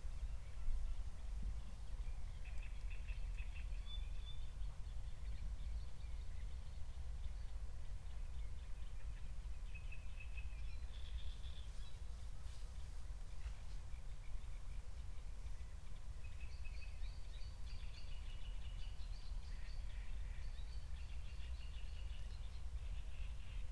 Berlin, Buch, Mittelbruch / Torfstich - wetland, nature reserve
22:00 Berlin, Buch, Mittelbruch / Torfstich 1
Deutschland, 18 June 2020